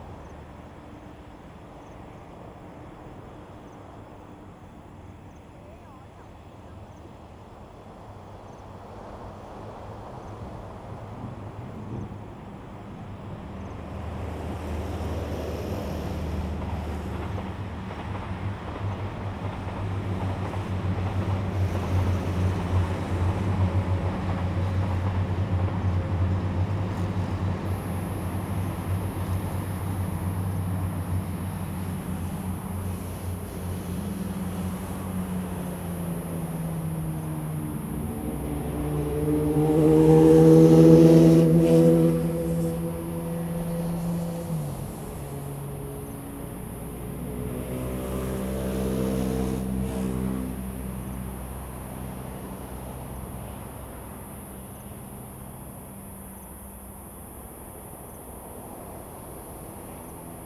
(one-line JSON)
{"title": "華源村, Taimali Township - the waves", "date": "2014-09-05 19:35:00", "description": "Traffic Sound, Sound of the waves\nZoom H2n MS +XY", "latitude": "22.66", "longitude": "121.03", "altitude": "13", "timezone": "Asia/Taipei"}